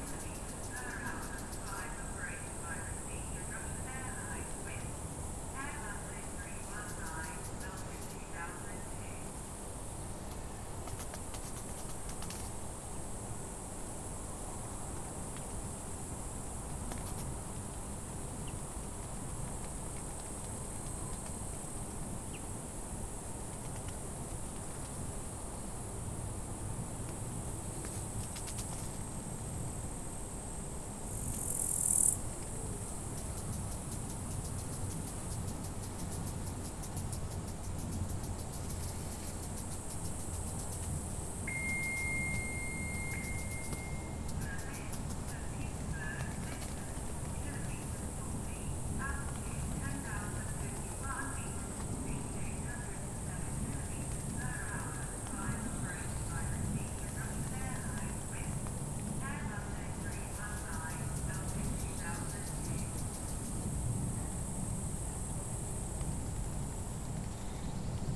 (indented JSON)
{
  "title": "Ojaveere, Neeruti, Valga maakond, Eesti - Ambience @ Maajaam after the Wild Bits festival",
  "date": "2018-07-22 20:02:00",
  "description": "Recorded inside a tent near Maajaam. Insects, birds, distant car sounds and airplanes. The voice is from Timo Toots's installation \"Flight Announcer\". Tascam DR-100mkIII with built in unidirectional microphones.",
  "latitude": "58.10",
  "longitude": "26.56",
  "altitude": "137",
  "timezone": "Europe/Tallinn"
}